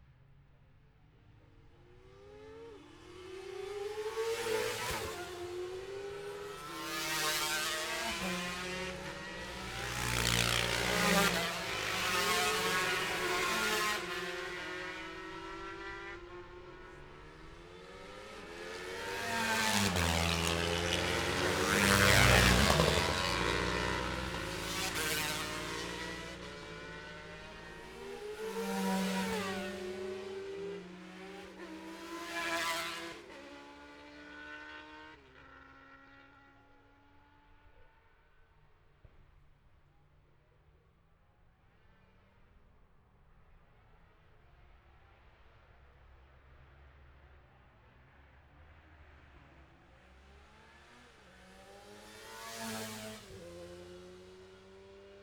Jacksons Ln, Scarborough, UK - olivers mount road racing ... 2021 ...
bob smith spring cup ... ultra-light weights qualifying ... dpa 4060s to MixPre3 ...
22 May 2021, ~12:00